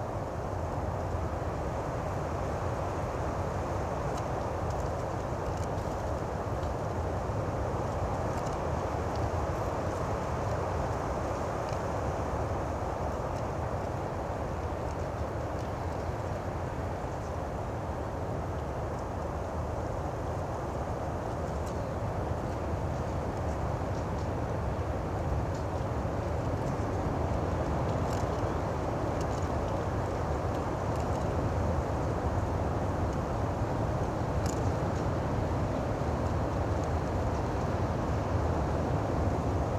old abandoned cemetery. nothing left, just fallen wooden cross and walls buit of stones. very strong wind
Utenos apskritis, Lietuva